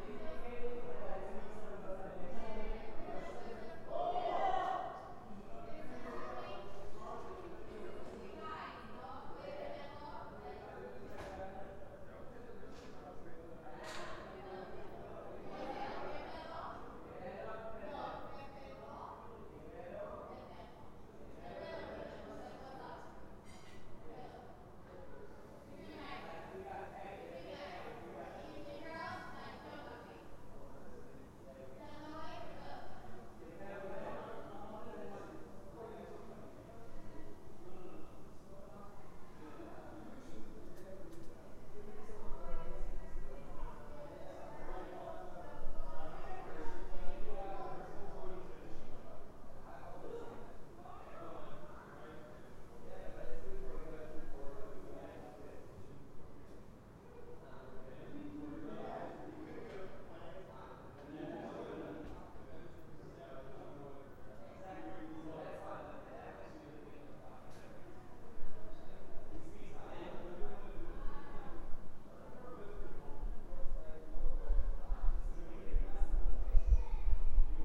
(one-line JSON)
{"title": "Backyard, Frankfurt am Main, Deutschland - backyardtalk", "date": "2016-09-01 22:31:00", "description": "People talking in the backyard, sign of a pleasant summer.", "latitude": "50.12", "longitude": "8.67", "altitude": "113", "timezone": "Europe/Berlin"}